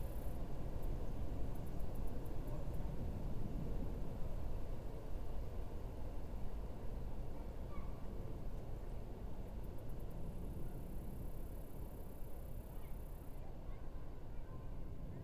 Westside Park, Atlanta, GA, USA - Parking Lot
Parking lot ambience captured at Westside Park. The park was relatively busy today. Children and adults can be heard from multiple directions. Many other sounds can be heard throughout, including traffic, trains, car doors slamming, people walking dogs, etc. Insects are also heard on each side of the recording setup. The recorder and microphones were placed on top of the car.
[Tascam DR-100mkiii & Primo EM272 omni mics]